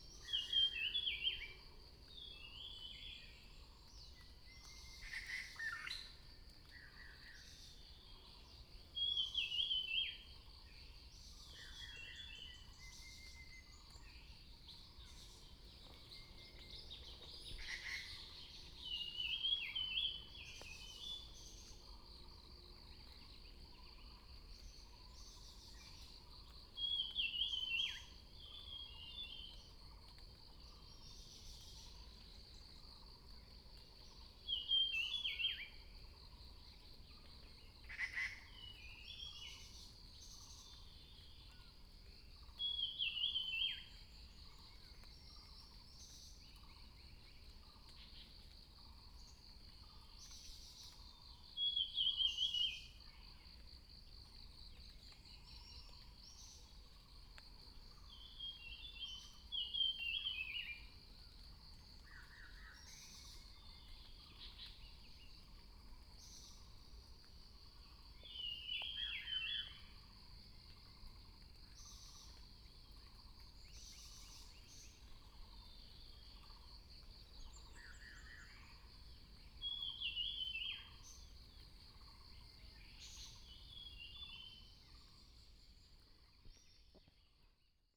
Zhonggua Rd., 桃米里 - Birds singing
Bird sounds
Binaural recordings
Sony PCM D100+ Soundman OKM II
Nantou County, Taiwan, May 2016